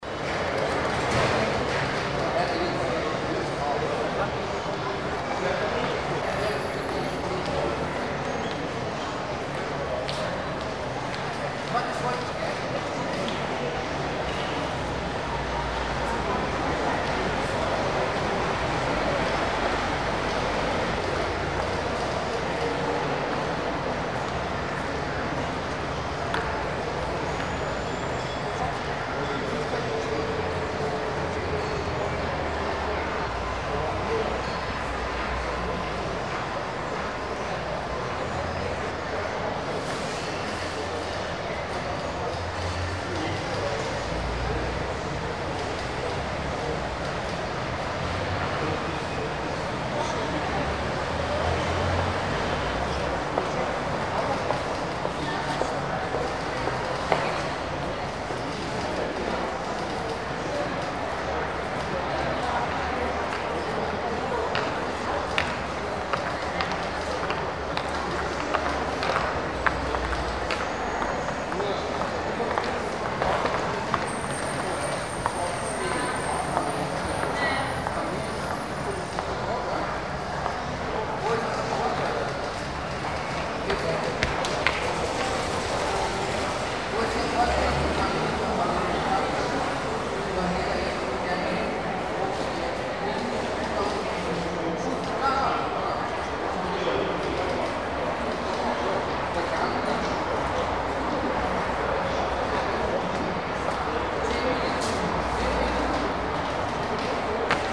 budapest, Ferenciek tere 10-11, shopping arcade
inside of one of the budapest shopping arcades, steps and a child
international city scapes and social ambiences
Közép-Magyarország, Magyarország, European Union